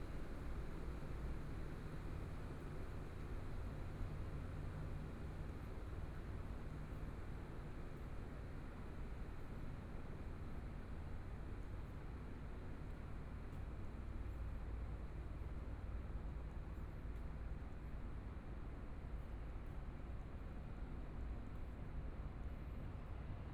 Fushun St., Taipei City - Walking in the small streets
MRT train sounds, Traffic Sound, Walking in the small streets, Binaural recordings, Zoom H4n+ Soundman OKM II
Zhongshan District, Taipei City, Taiwan